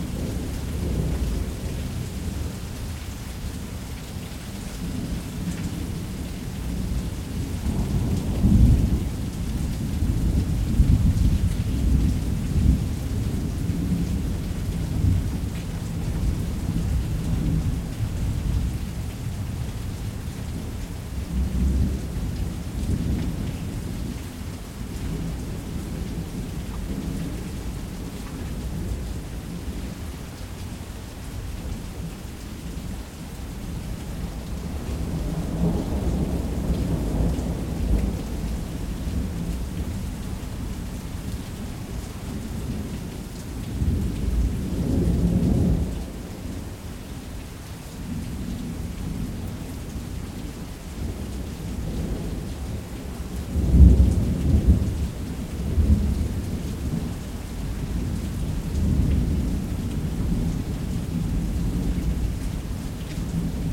Calot, Azillanet, France - Thunder and rain during summer in South of France
Thunder and rain in south of France during summer, recorded from the balcony of my house.
Recorded by an AB Setup with two B&K 4006 Omni microphones.
On a 633 Sound Devices recorder.
Sound Ref: FR-180812-3